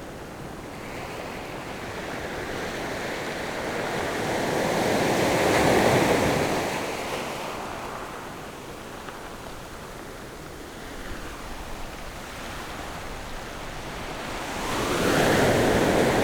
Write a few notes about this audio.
Waves and tides, Small beach, Zoom H6 + Rode NT4